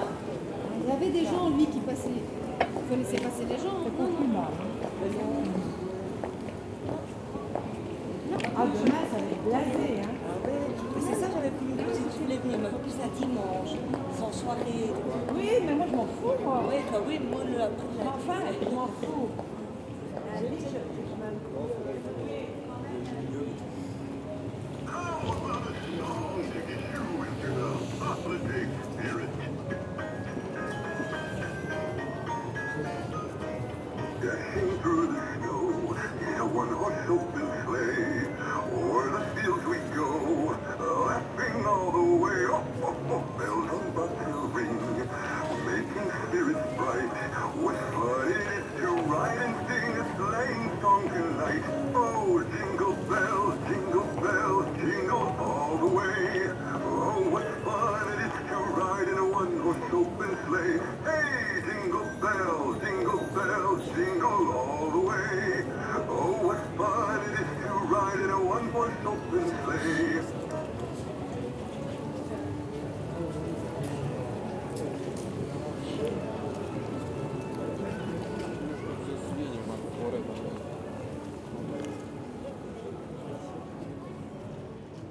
Charleroi, Belgique - Christmas market
Near the Chrysalis store, an automatic Santa-Claus broadcasts a small music every time somebody enters. It's like an horror film, with killer dolls. Frightening ! After I have a small walk in the Christmas market, during a very cold afternoon.